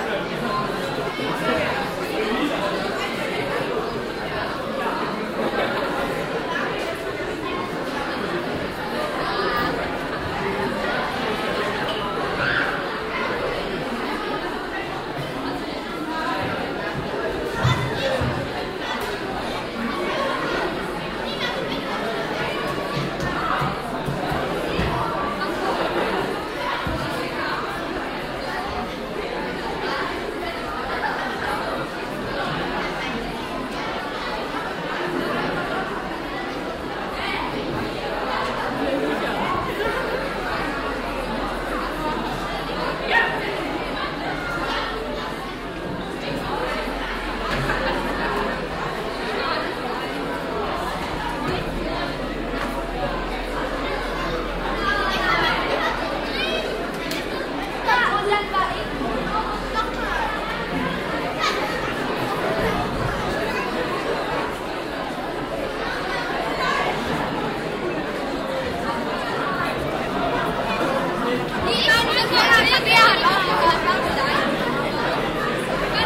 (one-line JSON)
{"title": "mettmann, schule, schüler in der pause - mettmann, schule, schueler in der pause", "description": "aufnahme in der schulpause auf dem schulgelaende\nproject: social ambiences/ listen to the people - in & outdoor nearfield recordings", "latitude": "51.25", "longitude": "6.97", "altitude": "125", "timezone": "GMT+1"}